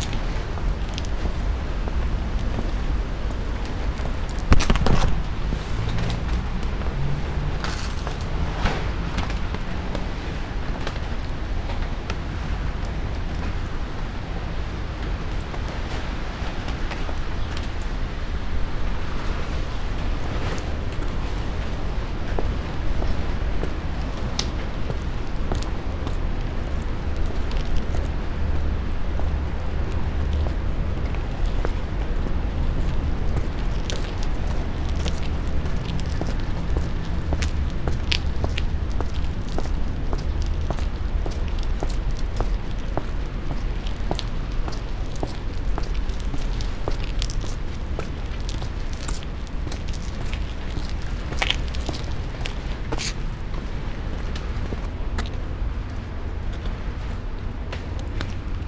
Wallstrasse, Mitte, Berlin - walking, tarpaulin
Sonopoetic paths Berlin